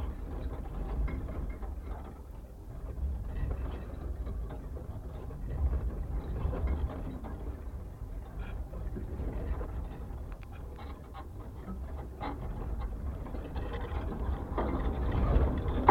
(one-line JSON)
{"title": "Vyžuonos, Lithuania, old barbed wire", "date": "2019-11-16 12:30:00", "description": "coiled rusty barbed wire on earth, probably even from soviet times...contact microphones", "latitude": "55.57", "longitude": "25.52", "altitude": "97", "timezone": "Europe/Vilnius"}